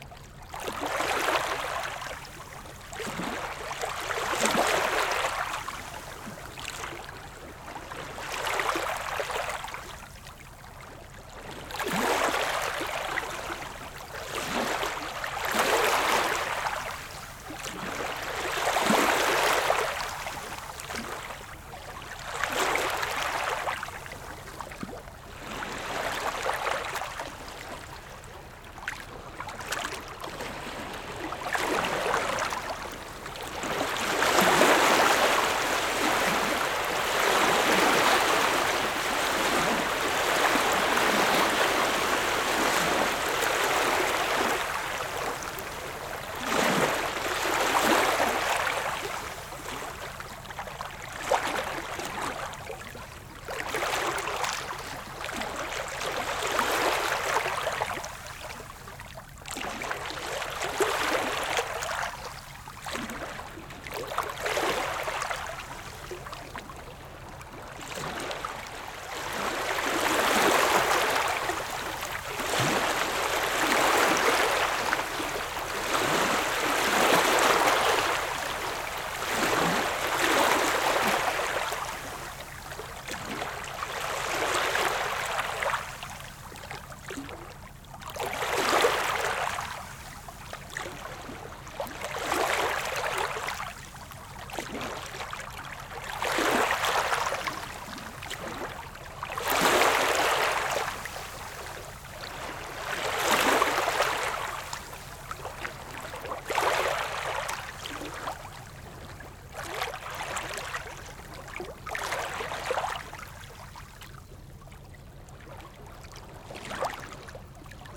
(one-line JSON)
{"title": "Degerhamnsvägen, Degerhamn, Sverige - Small waves stoney beach", "date": "2020-09-10 14:18:00", "description": "Small waves stoney beach. Recorded with zoom H6 and Rode ntg 3. Øivind Weingaarde.", "latitude": "56.36", "longitude": "16.41", "timezone": "Europe/Stockholm"}